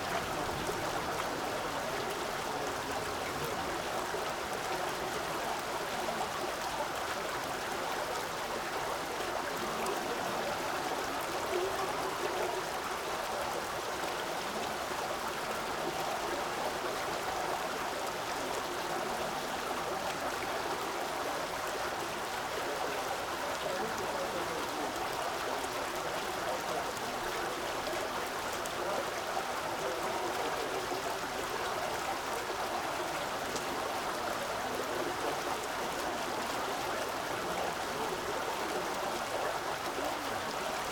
Rue de l'Alzette, Esch-sur-Alzette, Luxemburg - fountain
River Alzette flows under this street which is named after it. Some maps still suggest a visible water body, but only a fountain reminds on the subterranean river.
(Sony PCM D50)
Canton Esch-sur-Alzette, Lëtzebuerg